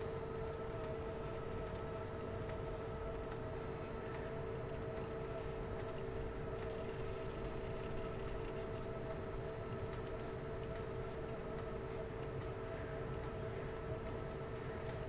Kienberg, Berlin - summer bobsled run
During 2017, the IGA (international garden show) took place in this area. For some reason and among others, they built a summer bobsled run into the park. Only a few people seem to enjoy it, on a late winter Friday afternoon. The whole construction is constantly emitting mechanical and electric sounds. A questionable pleasure to my ears...
(SD702, SL502 ORTF)